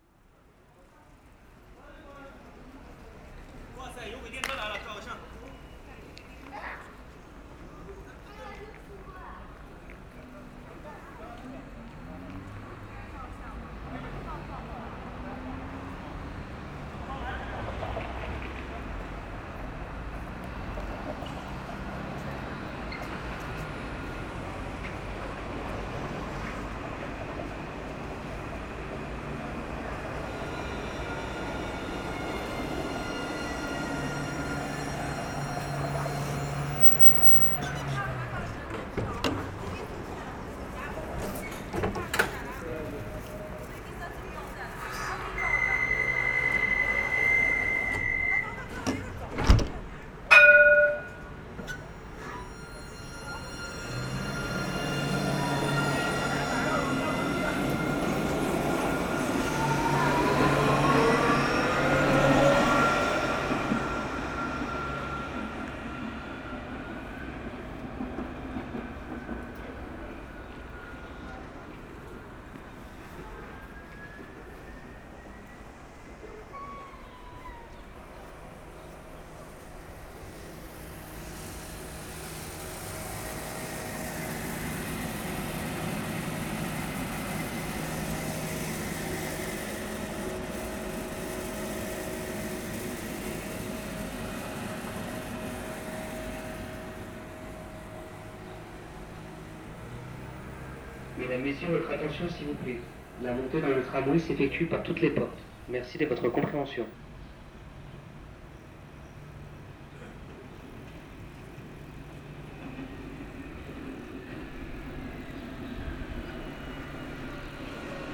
Tours, France - Anatole France station
Recording of the tramways passing by in the Anatole France station, and the Saint-Julien church ringing the hour of the day.